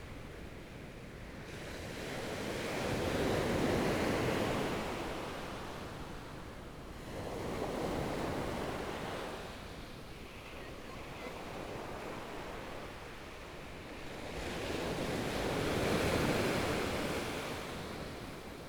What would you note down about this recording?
Sound waves, Please turn up the volume, Binaural recordings, Zoom H4n+ Soundman OKM II + Rode NT4